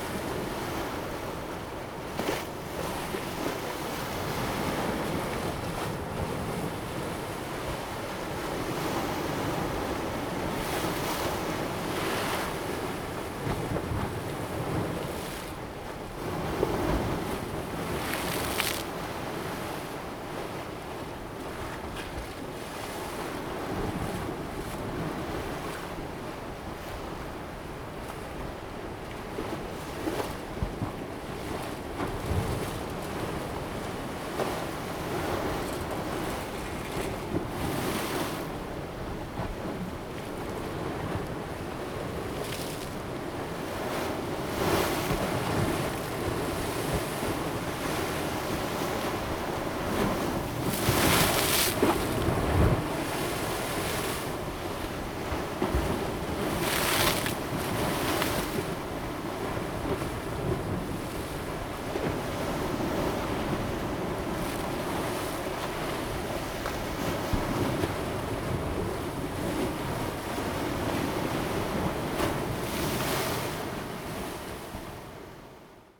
鳳坑漁港, 新豐鄉 - Seawater impact pier
Seawater impact pier, Seawater high tide time, Small pier
Zoom H2n MS+XY